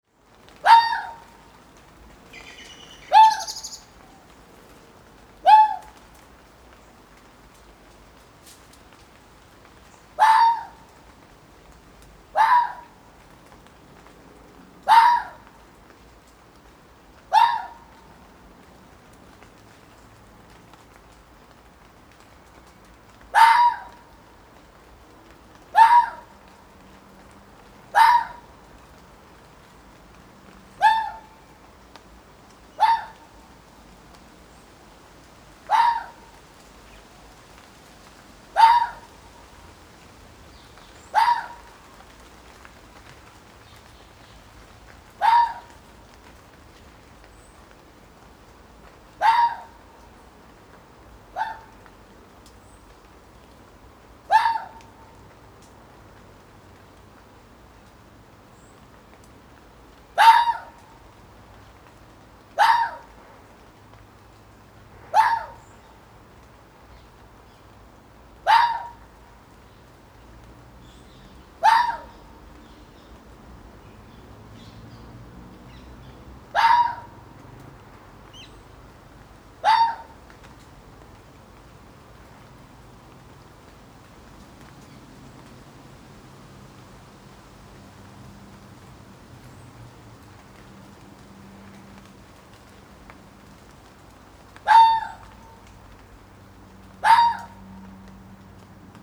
London Borough of Lewisham, Greater London, UK - Close Fox in the rain under the Flightpath
By the edge of Hither Green Cemetery on a rainy evening this young fox is disturbed by my presence. A man in the next garden had swept an area and left some food but the fox had to go past me to get to it. He was not happy. The flight path into Heathrow Airport is quite low here. Planes are constantly overhead with few gaps between.
August 2013